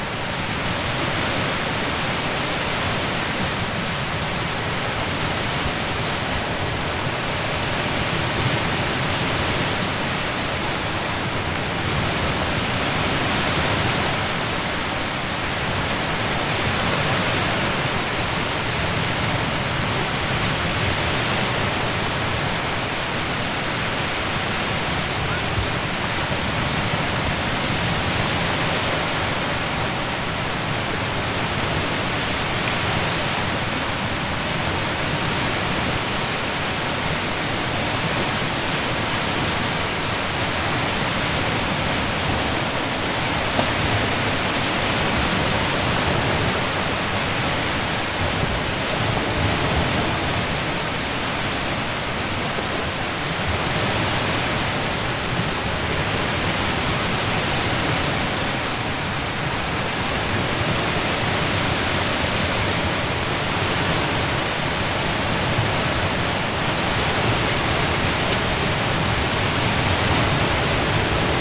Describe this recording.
Baltic sea shore, recorded from the top of a derelict coastal defence battery. Recorded with ZOOM H5 and Rode NTG3b.